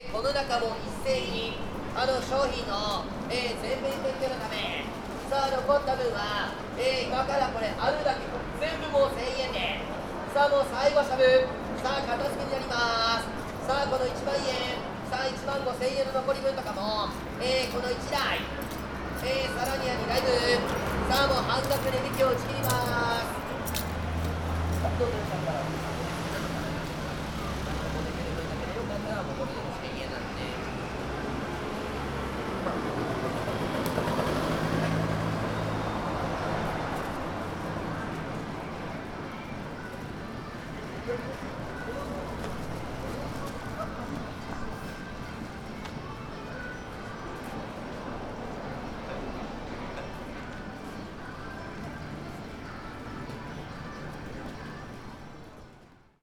Osaka, Nipponbashi district - watch vendor
a guy selling watches of a table on the street singing his sales pitch. unfortunately for the recording he caught the attention of a customer.
泉北郡 (Senboku District), 近畿 (Kinki Region), 日本 (Japan), 31 March 2013, 12:54